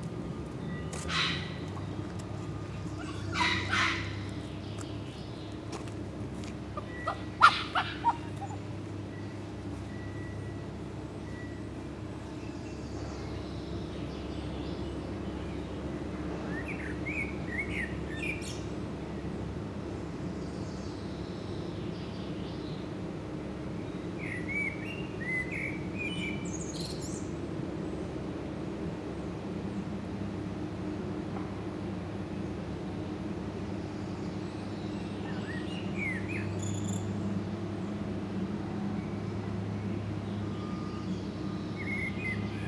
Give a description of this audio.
behind the Villa Wahnfried, the tomb of richard and cosima wagner